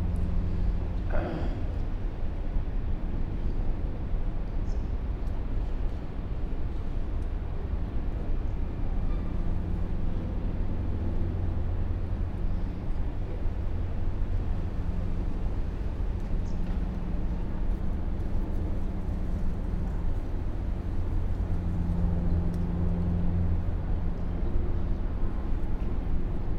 Crossland Rd, Reading, UK - Abbey Amphitheatre
10 minute meditation sitting at the bottom of the small amphitheatre behind Reading Library (spaced pair of Sennheiser 8020s with SD MixPre6)
8 November 2017, ~13:00